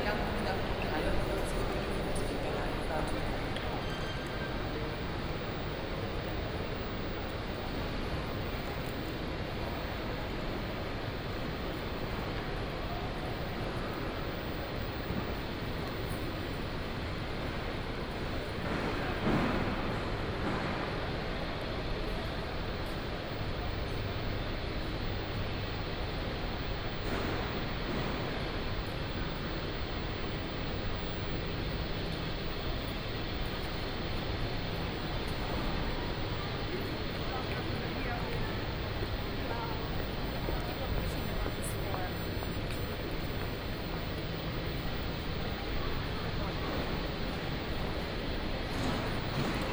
Hauptbahnhof, Munich 德國 - Station hall
walking in the Station hall
Munich, Germany, 11 May 2014, 08:44